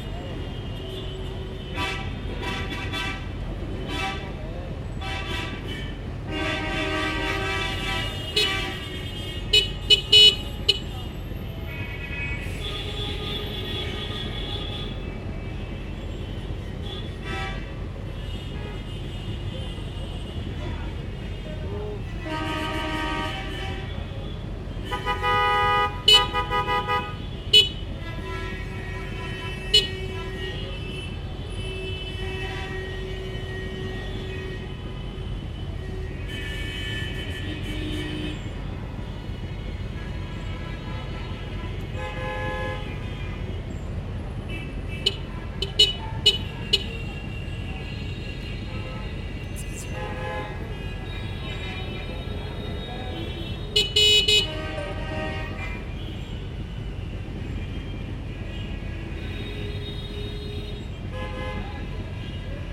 Ghayt Al Adah, Abdeen, Al-Qahira, Ägypten - Kobri Al Azhar Traffic Jam
Recorded on may 1st 2012 about 1.30pm. Sitting in a cab within traffic jam. Honking, motorcycle driving between the cars, running engine of the cab. Zoom H4N internal mircrophones.